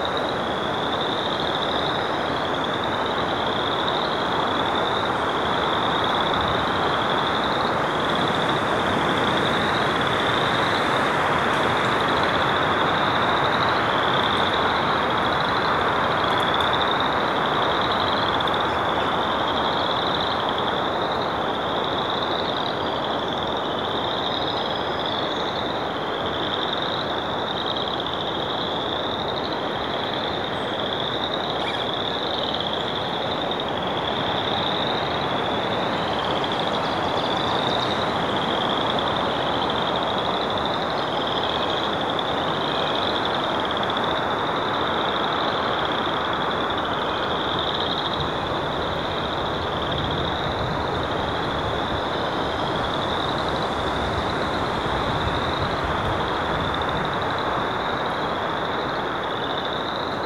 Clam Lake, WI, USA - Former site of US Navy ELF antenna array
A very active frog pond, along a clearing. This clearing, and miles more like it, are the only evident remnant of the world's largest antenna array - The Clam Lake US Navy Extremely Low Frequency (ELF) Antenna Array. This array, miles of above-ground wiring, paired with a sister site near Witch Lake in Upper Peninsula Michigan, generated wavelengths 5000-6000 kilometers long. These subradio waves allowed for communications between submarines around the world to communicate to bases in the US.Health effects of ELF waves on humans and animals alike, especially wales and dolphins, are still unknown. Due to this site being extremely unpopular with the residents of Wisconsin and Michigan, this site was deactivated in 2004 after 19 years of use. The cleared paths are now used by snowmobilers and elk hunters.